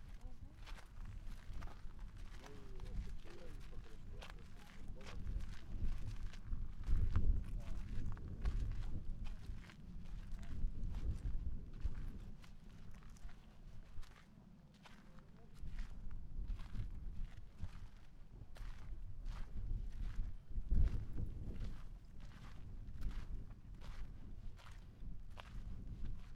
Šiaulių rajonas, Lithuania - Hill of crosses